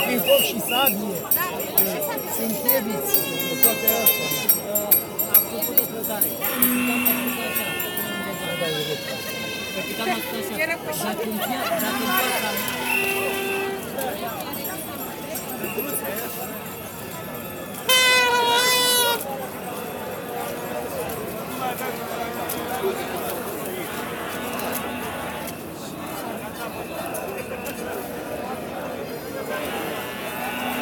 Protests at Piata Universitatii
9th day of protests by Romanians dissatisfied with the president.